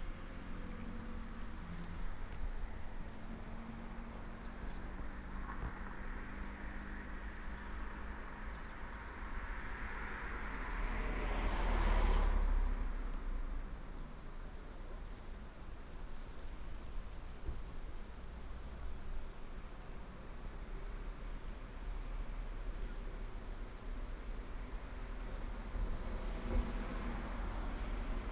內灣大橋, Hengshan Township - next to the bridge
On the bridge, Traffic sound, stream